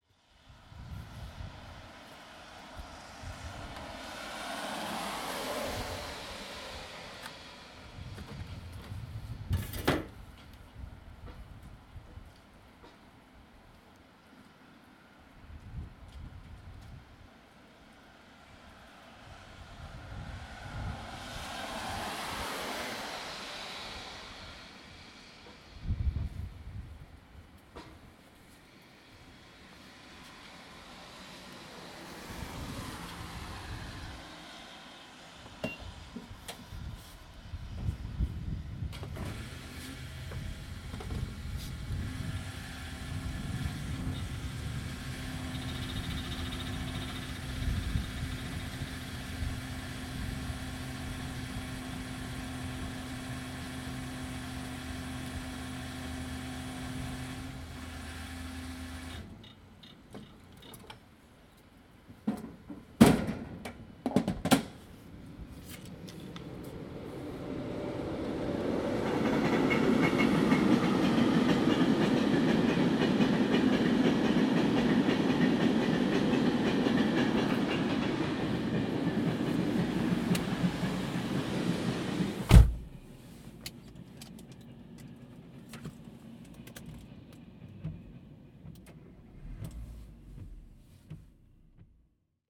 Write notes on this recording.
20 euro di benzina in una notte piovosa. ho appoggiato il mio Zoom H2N sulla pompa di benzina e poi ho fatto i 20 euro, purtroppo si sente il vento